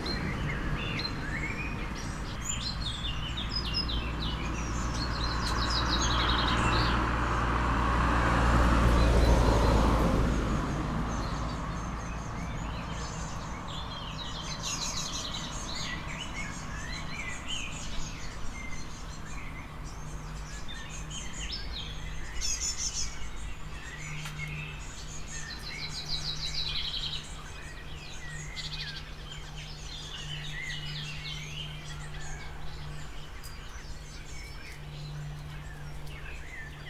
Rte de L’Ia, Motz, France - oiseaux et grenouilles
Base de loisir de Motz chants d'oiseaux coassements de grenouilles il y en a même une qui bondit sur la vase, quelques passages de voitures et d'un train en direction de Seyssel.